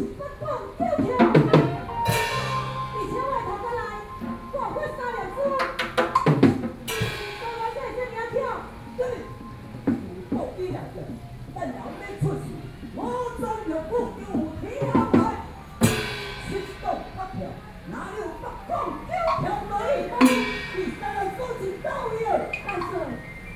Buddhist Temple, Luzhou District, New Taipei City - Taiwanese Opera
Outdoor Taiwanese Opera, Standing close to the drums, Binaural recordings, Sony PCM D50 + Soundman OKM II